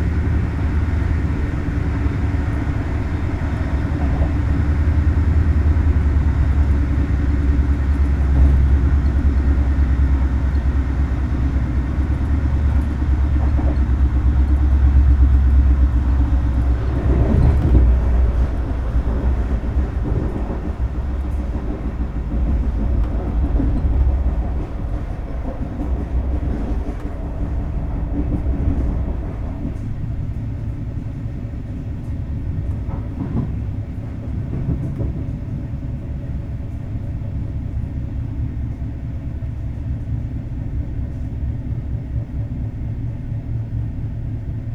From compartment, arrival in station, people embarking and departure
Capturé du compartiment. Arrivée en gare, voix de passagers et départ